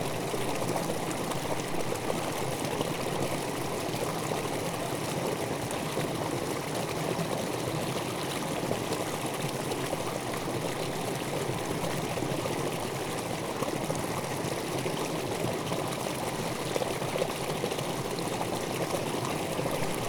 Rieselfelder, Lietzengraben, Berlin Buch, Deutschland - small weir of ditch Graben 38
water flows in dirch Graben 38 over a small weir direction Lietzengraben.
(Tascam DR-100 MK3)
17 April 2022, 14:45